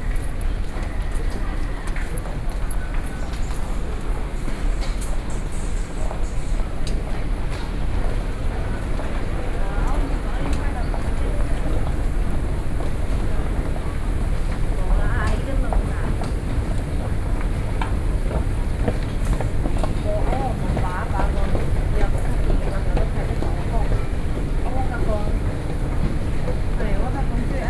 Taipei main Station, Taiwan - Enter the MRT station
SoundWalk, Enter the MRT station, Across the walk to the platform, (Sound and Taiwan -Taiwan SoundMap project/SoundMap20121129-12), Binaural recordings, Sony PCM D50 + Soundman OKM II